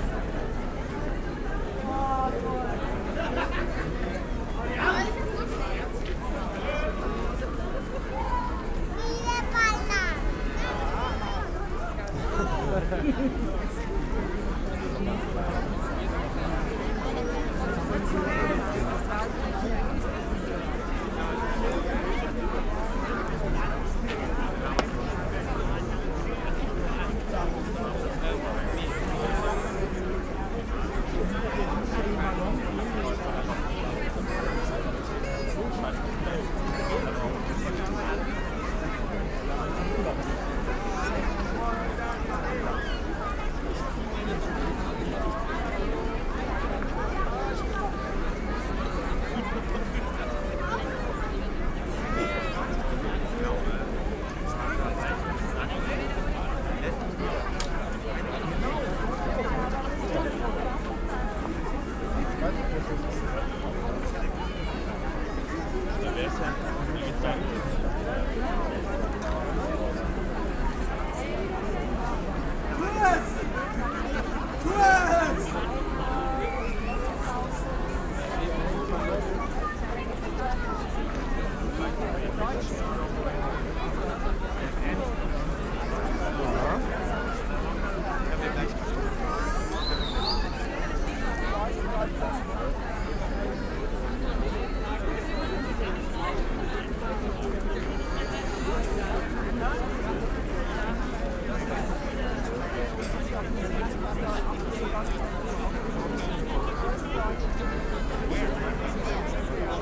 Leuschnerdamm, Engelbecken - people celebrating 25 years of german unity
Berlin, Kreuzberg, former Berlin Wall area, lots of people celebrating 25y of German Unity
(Sony PCM D50, DPA4060)
Berlin, Germany